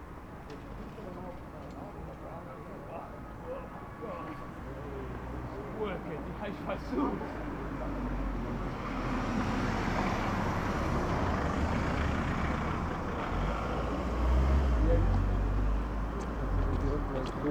Berlin: Vermessungspunkt Maybachufer / Bürknerstraße - Klangvermessung Kreuzkölln ::: 21.08.2011 ::: 02:48